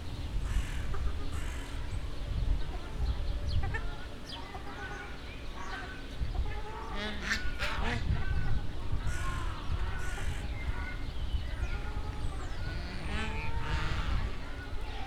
Berliner zoo - ducks and other birds